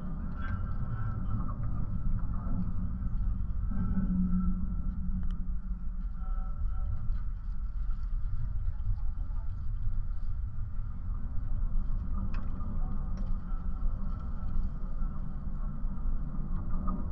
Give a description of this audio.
very windy day. contact mics on water ski tower support wires